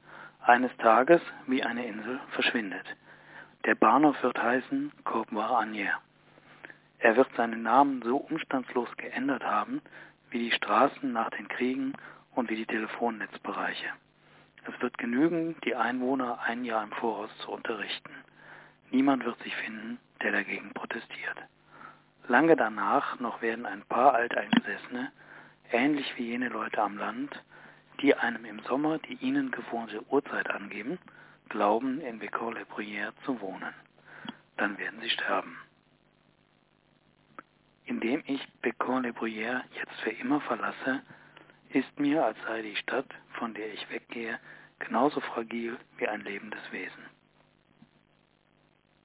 Bécon-les-Bruyères - Bécon-les-Bruyères, Emmanuel Bove 1927
Paris, France